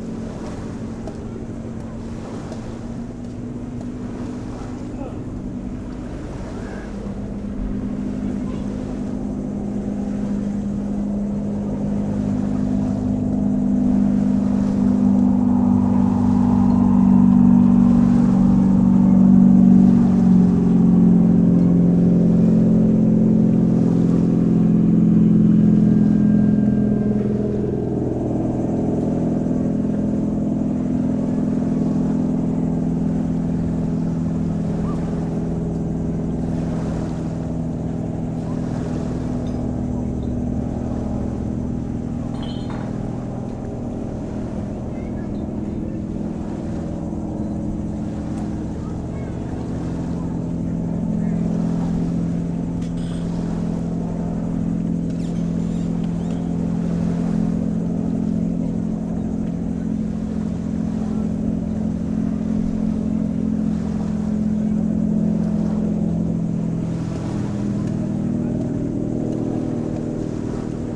Nydri, Lefkada - Nydri Beach Atmosphere
Beach sounds Nydri, Lefkada, Greece.